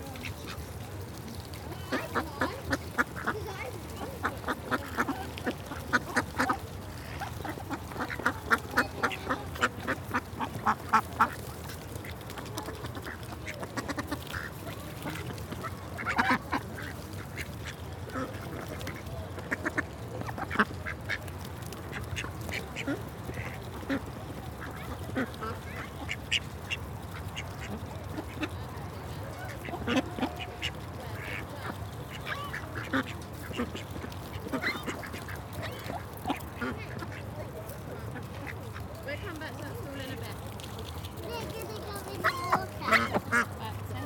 This is the sound of the ducks on Amners Farm. You can hear a nearby road, the fence pinging with the ducks pecking underneath it to get at food with their bills, and the sounds of parents and children meeting and feeding the ducks. The much raspier sounds are produced by drakes, who have a hoarse, raspy little man-duck quack, whereas the noisier more authoritative voices belong to the females. I spotted all sorts of breeds including my favourite: Khaki Campbells!